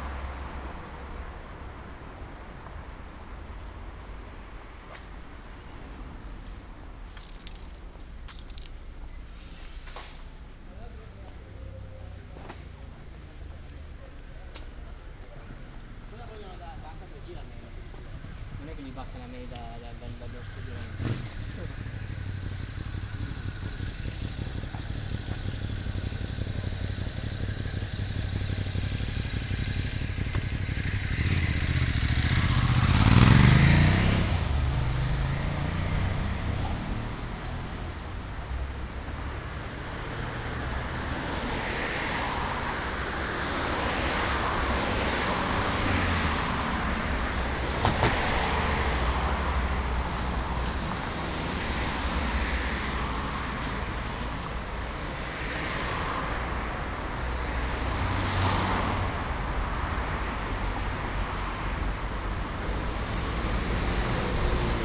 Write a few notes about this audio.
“Posting postcard and market shopping at the time of covid19” Soundwalk, Chapter LXX of Ascolto il tuo cuore, città. I listen to your heart, city. Friday May 8th 2020. Walking to mailbox to post postcard and shopping in outdoor market Piazza Madama Cristina, fifty nine days (but fifth day of Phase 2) of emergency disposition due to the epidemic of COVID19. Start at 1:45 p.m. end at 2:17 p.m. duration of recording 32’27”, The entire path is associated with a synchronized GPS track recorded in the (kml, gpx, kmz) files downloadable here: